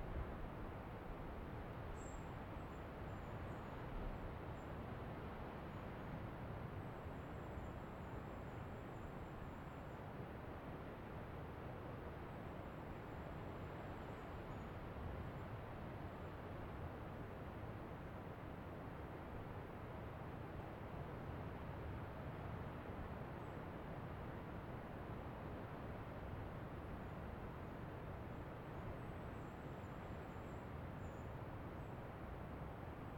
Valdivia, Chili - LCQA AMB PUNTA CURIÑANCO EL OLIVILLO MORNING BIRDS OCEAN BREEZE MS MKH MATRICED
This is a recording of a forest 'El Olivillo' in the Área costera protegida Punta Curiñanco. I used Sennheiser MS microphones (MKH8050 MKH30) and a Sound Devices 633.
Provincia de Valdivia, Región de Los Ríos, Chile